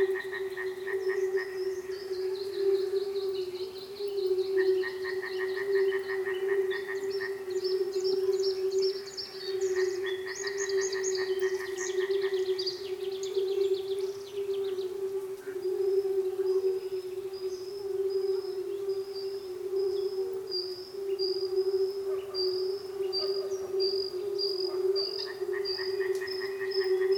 Manušice, Česká Lípa, Česko - Frogs
Severovýchod, Česká republika